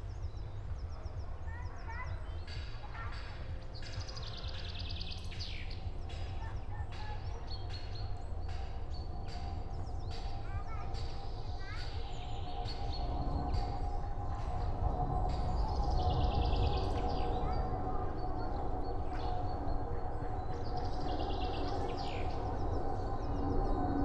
Krampenburg, near Müggelheim, Berlin - forest ambience, camping, aircraft
the nearby camping awakens, people rise tents and fix their lodges. forest ambience, frequently disrupted by aircrafts departing from Berlin Schönefeld airport.
(SD702, NT1A)